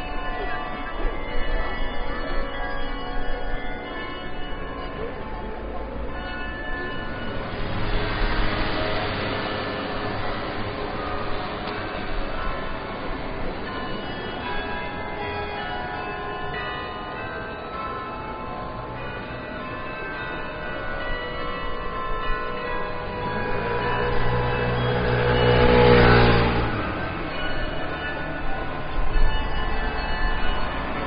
Arrondissement, Lyon, France - Carillon de l'hôtel de ville
Carillon 65 cloches -Place des Terreaux à Lyon - Zoom H6 micros incorporés X/Y
26 March 2017